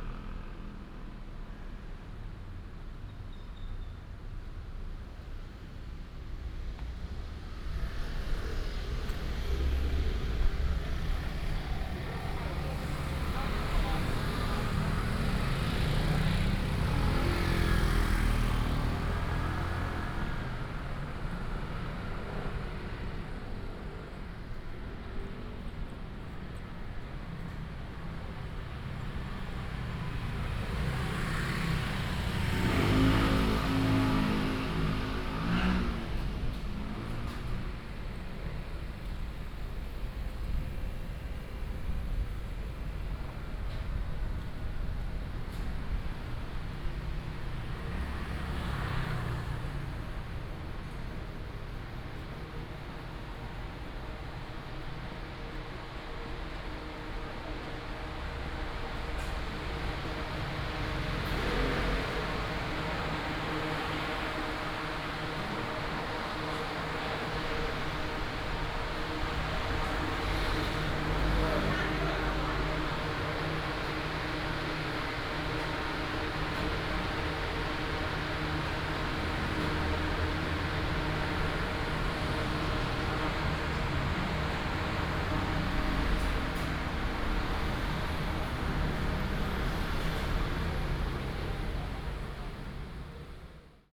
海光新村, East Dist., Hsinchu City - Walking in the old community alley
Walking in the old community alley, traffic sound, Binaural recordings, Sony PCM D100+ Soundman OKM II